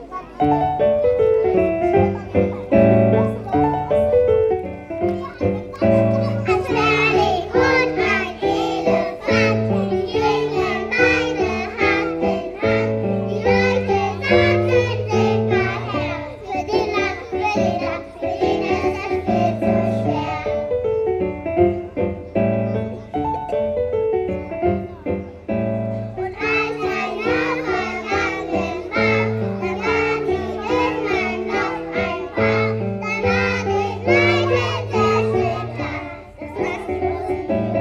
Berlin, Urbanstr., Nachbarschaftshaus - Sommerfest, kids choir
sommerfest (summerparty), kindergaren choir performing, kids of age 2-5